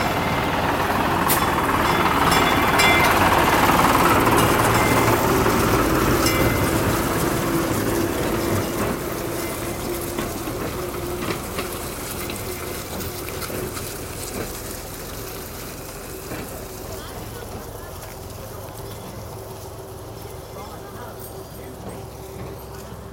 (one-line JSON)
{"title": "gamla linköping, old tourist tram (2)", "latitude": "58.41", "longitude": "15.59", "altitude": "82", "timezone": "GMT+1"}